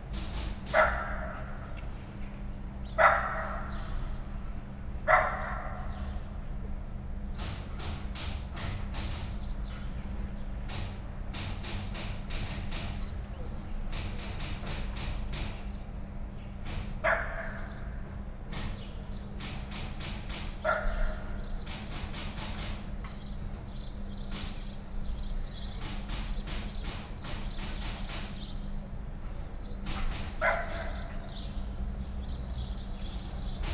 Mladinska, Maribor, Slovenia - typing last textual fragment
rewriting 18 textual fragments, written at Karl Liebknecht Straße 11, Berlin, part of ”Sitting by the window, on a white chair. Karl Liebknecht Straße 11, Berlin”
window, typewriter, cafetera, birds, yard ambiance
Vzhodna Slovenija, Slovenija, 5 June 2013